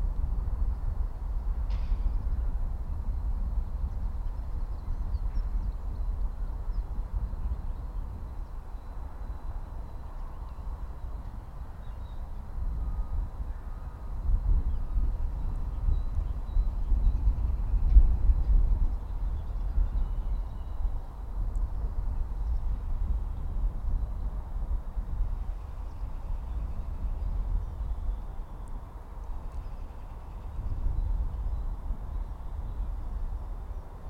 Old Sarum Airfield, Salisbury, UK - 033 Old Sarum Airfield
February 2017